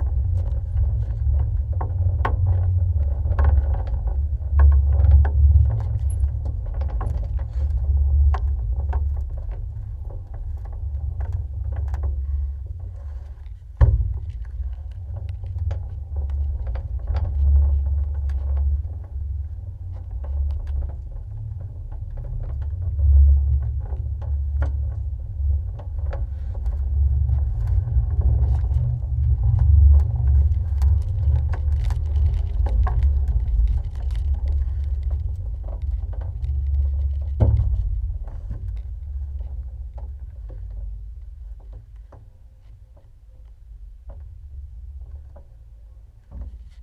contact mic recording of an old tv antenna on top of a bunker
8 April 2011, ~16:00